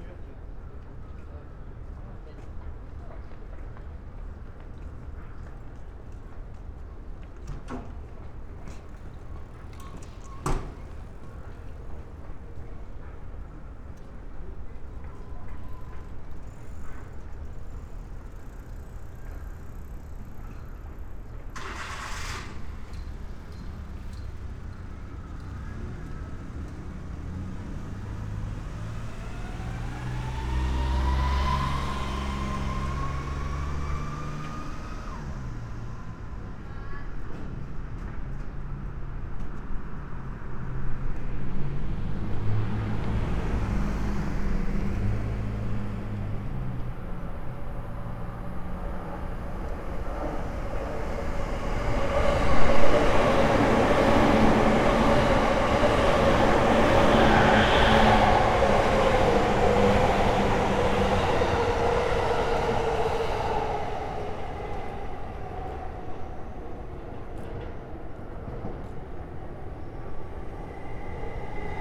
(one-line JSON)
{"title": "near komagome station, tokyo - at sundown", "date": "2013-11-12 16:32:00", "description": "above JR Yamanote Line, beyond train tracks horizon bright autumn sun is descending into the Tokyo's underworlds", "latitude": "35.74", "longitude": "139.75", "altitude": "29", "timezone": "Asia/Tokyo"}